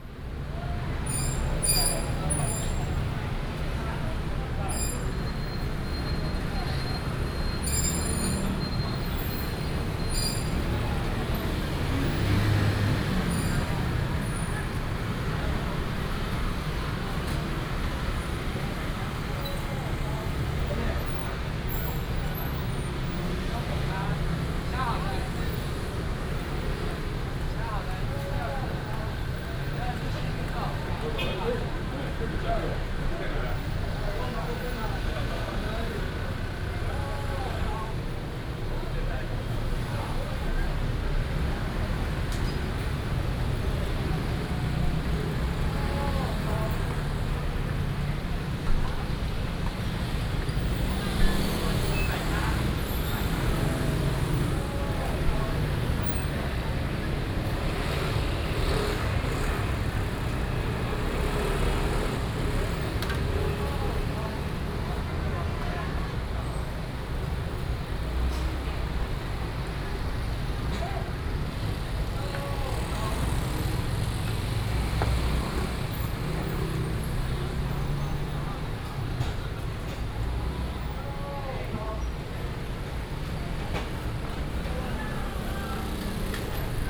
Songjiang St., Banqiao Dist., New Taipei City - At the junction
At the junction, Cries of street vendors, traffic sound